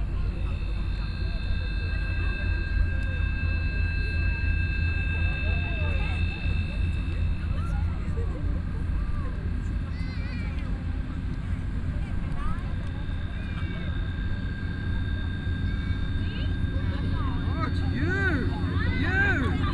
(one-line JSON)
{"title": "THE GRAND GREEN, Taipei - on the lawn at night", "date": "2013-09-28 21:05:00", "description": "The crowd on the lawn at night, Distant electronic music, Sony PCM D50 + Soundman OKM II", "latitude": "25.05", "longitude": "121.53", "altitude": "9", "timezone": "Asia/Taipei"}